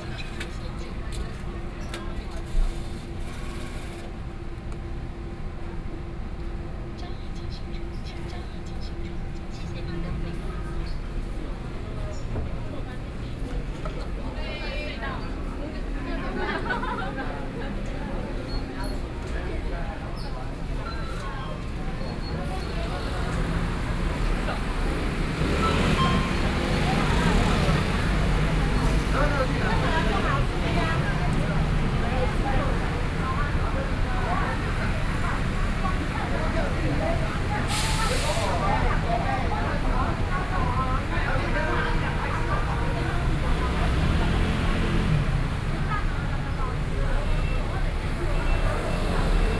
Qingdao E. Rd., Taipei City - In convenience stores
In convenience stores, Binaural recordings, Sony PCM D50 + Soundman OKM II